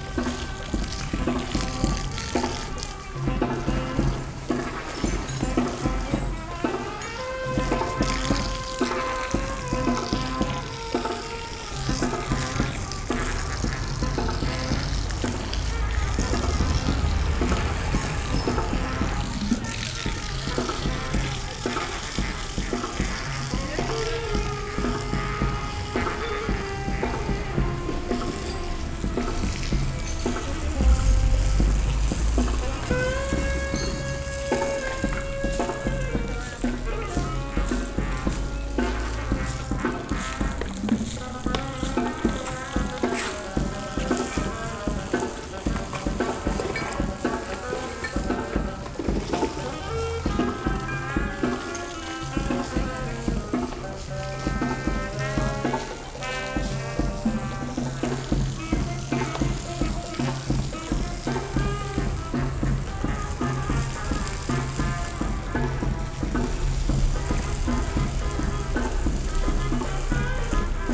Plaza el Descanso, Valparaíso, Chile - a woman cleans the place
Two women are cleaning up the place after the weekend. The party people at night have left tons of waste, which is a constant source of annoyance to neigbours and the school nearby, who try to keep the place in a good shape.
Región de Valparaíso, Chile, 29 November, ~15:00